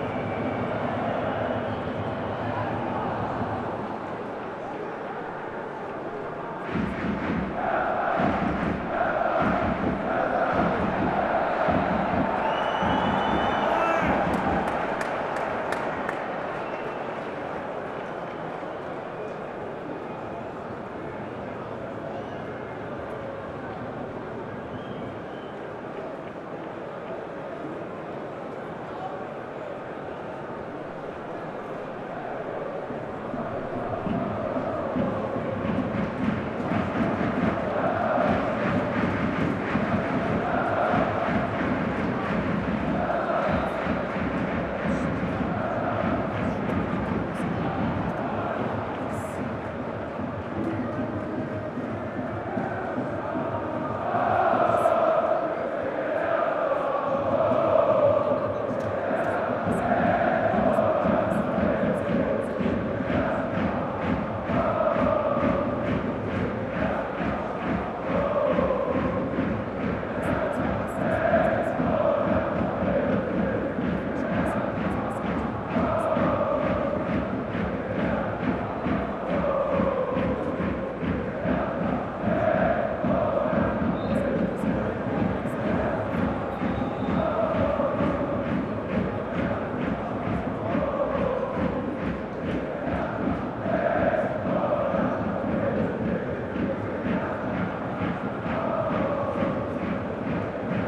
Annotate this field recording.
football league first division match between hertha bsc berlin and fc köln (cologne), begin of the second half, hertha fan chants. the match ended 0:0. the city, the country & me: april 18, 2015